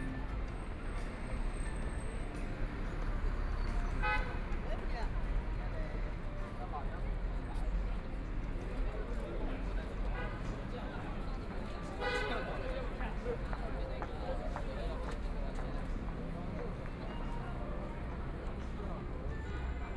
Noon time, in the Street, Walking through a variety of shops, Construction Sound, Traffic Sound, Binaural recording, Zoom H6+ Soundman OKM II
Shanghai, China, 21 November